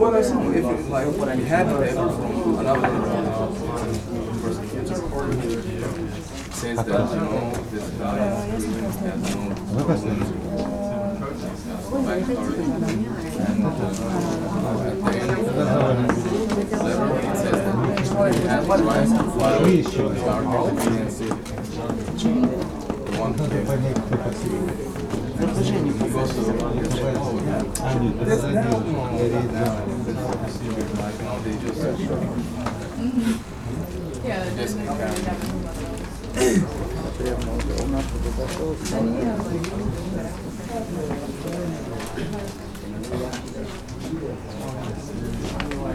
Bratislava-Petržalka, Slovenská republika - At the Alien Police Department I
Queueing at Bratislava's Alien Police Department